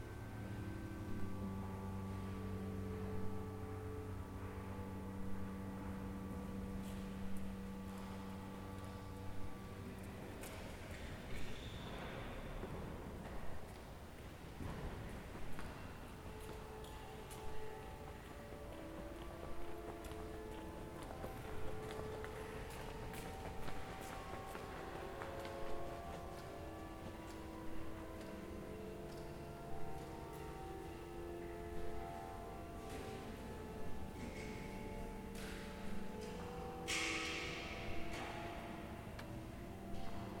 Venice, Italy - Inside a church
organ music playing, noises from people waking inside the reveberant space of a church
18 September 2012, 17:42